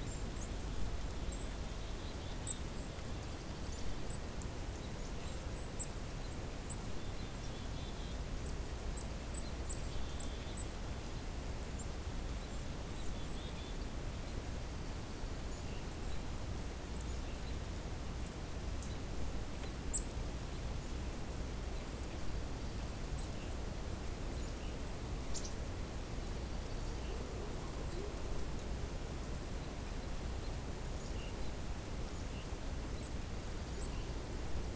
Fruitvale, BC, Canada - McLeod Road Fruitvale BC March